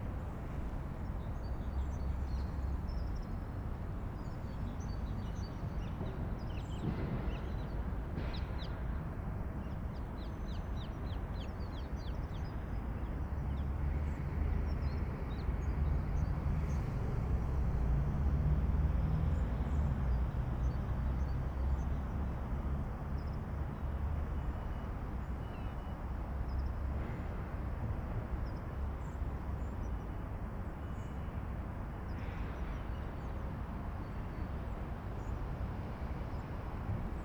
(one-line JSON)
{"title": "Sint Barbara, Binckhorst, The Netherlands - weiland bij begraafplaats", "date": "2012-02-28 11:56:00", "description": "meadow by graveyard. Birds. Distant trucks, machines, trains... Soundfield Mic (ORTF decode from Bformat) Binckhorst Mapping Project", "latitude": "52.07", "longitude": "4.34", "timezone": "Europe/Amsterdam"}